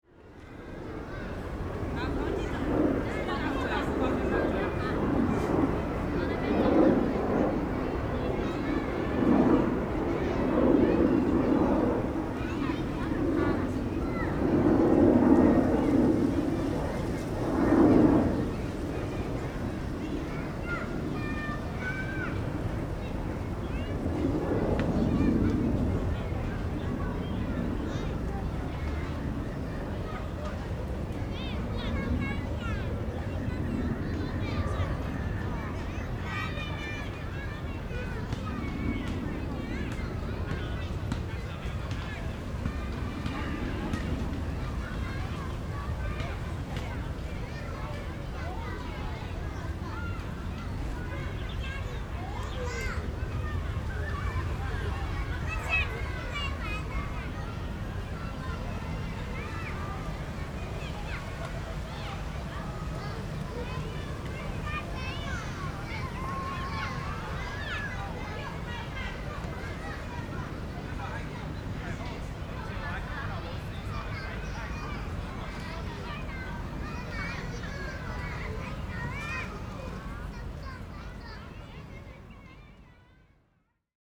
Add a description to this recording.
In the park, Rode NT4+Zoom H4n